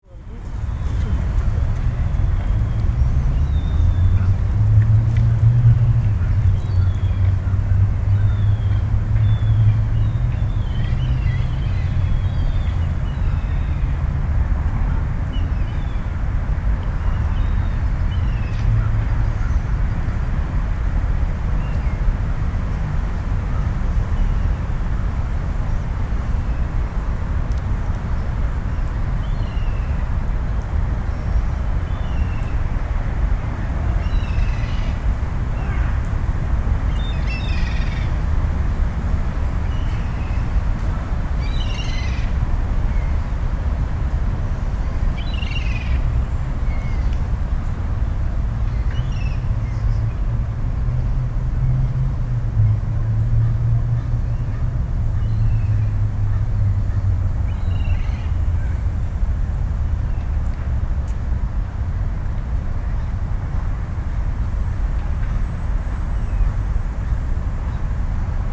Montreal: Parc Lafontaine Pond - Parc Lafontaine Pond
equipment used: M-Audio Microtrack
hill along pond